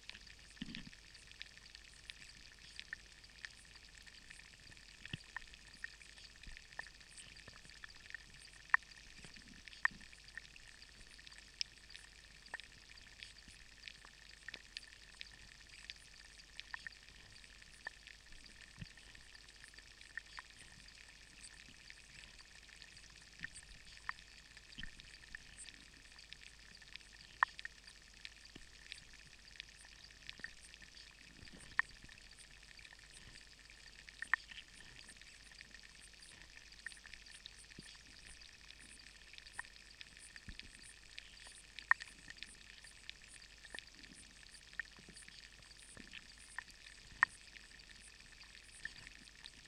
Aknysteles, Lithuania, underwater activity
underwater activity in a lake. hydrophones. and it's heard how auto is passing on the near road
28 June